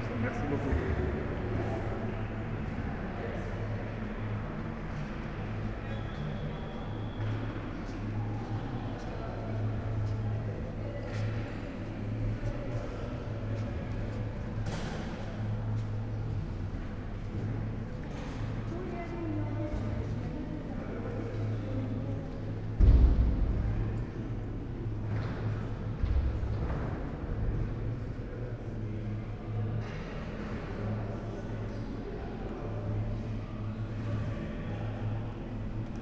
walk inside, basilica ambience, there is a nice echoing moment from outside to the inside - sounds of the crowd ...